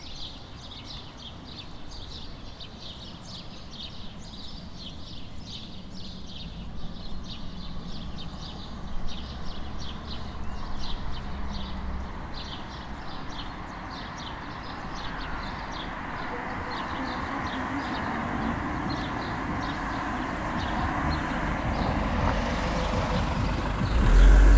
Berlin: Vermessungspunkt Maybachufer / Bürknerstraße - Klangvermessung Kreuzkölln ::: 22.05.2011 ::: 05:22
22 May 2011, 05:22